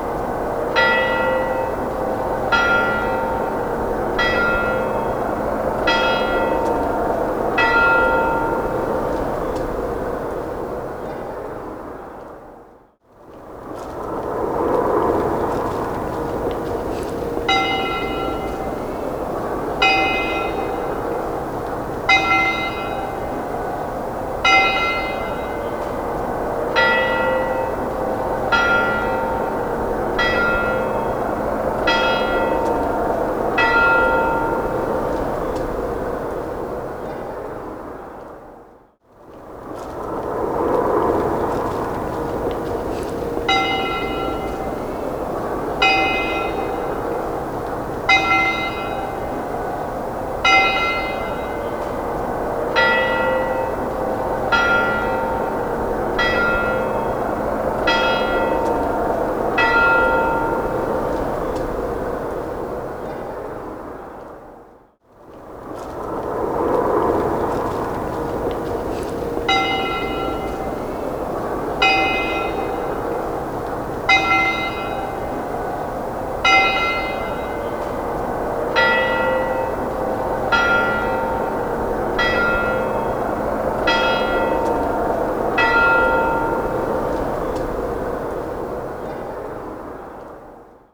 Sv. Kliment
Bells that ring to tell the time every 15 min.